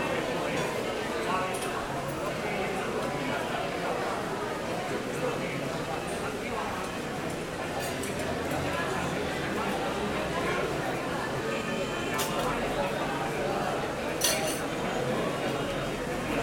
Cl., Medellín, Antioquia, Colombia - Zona de comida ingeniería
Zona de comidas ingeniería Universidad de Medellín, día soleado, hora de almuerzo.
Coordenadas: LN 6°13'48 LO 75°36'42
Dirección: Universidad de Medellín - Zona de comida Ingeniería
Sonido tónico: Conversaciones, pasos
Señal sonora: ruidos de sillas y platos
Grabado con micrófono MS
Ambiente grabado por: Tatiana Flórez Ríos - Tatiana Martinez Ospino - Vanessa Zapata Zapata